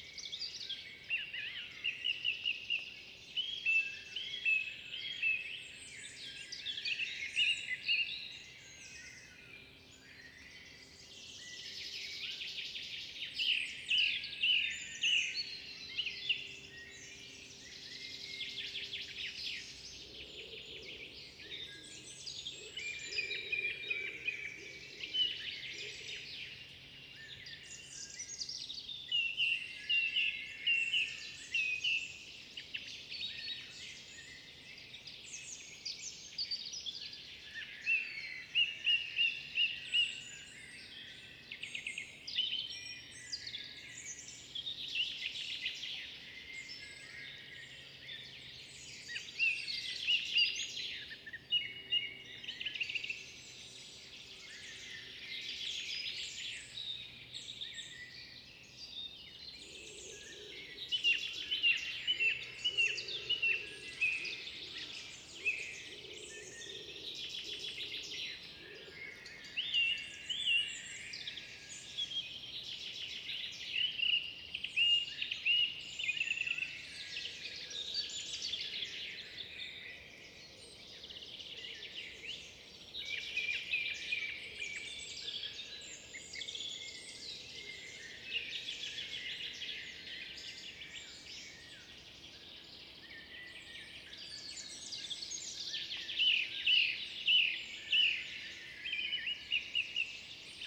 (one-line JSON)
{"title": "Beselich Niedertiefenbach, Ton - pond in forest, morning birds chorus", "date": "2010-06-03 06:00:00", "description": "pond in forest, early morning, bird chorus, distant churchbells from two villages, a plane.", "latitude": "50.45", "longitude": "8.15", "altitude": "251", "timezone": "Europe/Berlin"}